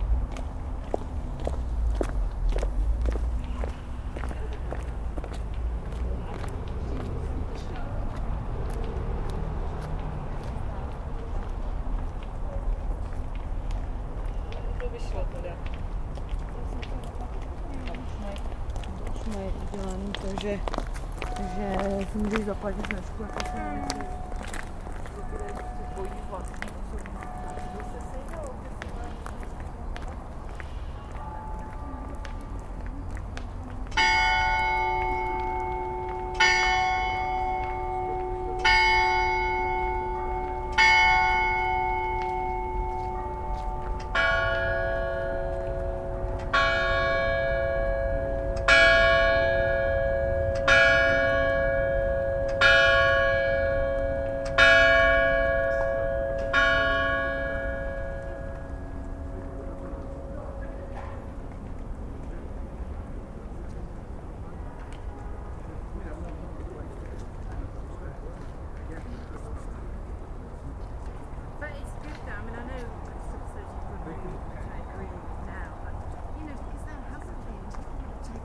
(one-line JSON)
{"description": "Loreta has one of the best known carillion bells in Prague. At 7pm though it takes a rest and merely strikes the hour.", "latitude": "50.09", "longitude": "14.39", "altitude": "278", "timezone": "Europe/Berlin"}